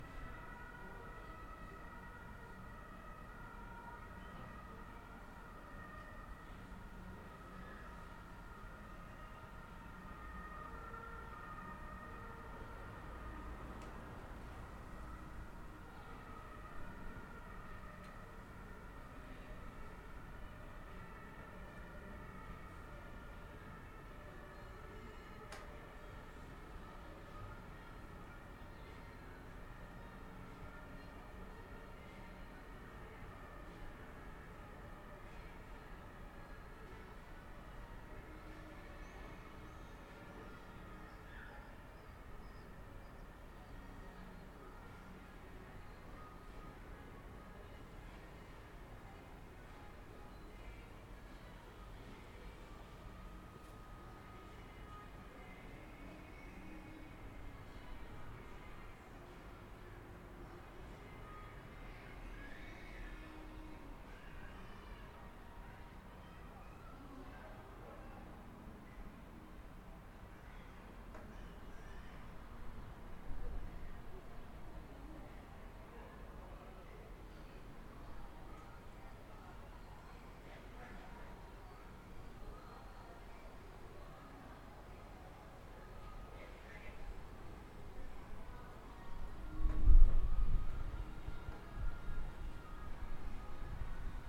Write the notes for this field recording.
Vormittägliche Ruhe am Meeting-Point in der Gartenstrasse / Seniorenstift